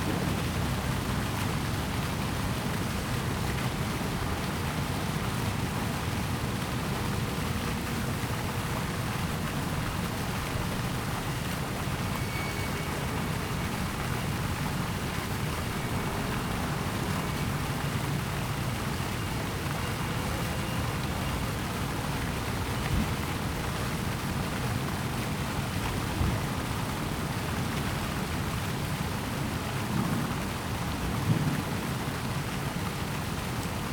Waterways, fountain, Construction sound, Traffic sound
Zoom H2n MS+XY